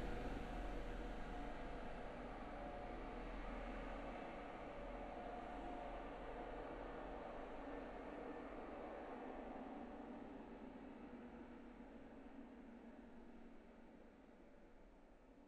schuettbuergermillen, train tunnel
a second recording at the same place. This time a train comes from the other side of the mountain tunnel - hooting a signal - passing by.
Eine zweite Aufnahme am selben Platz. Diesmal kommt ein Zug von der anderen Seite des Bergtunnels - ein Signal ertönt - er fährt vorei.
Un deuxième enregistrement au même endroit. Cette fois, un train arrive depuis l’autre côté du tunnel sous la colline – klaxonne – passe.
Project - Klangraum Our - topographic field recordings, sound objects and social ambiences
Kiischpelt, Luxembourg, 3 August 2011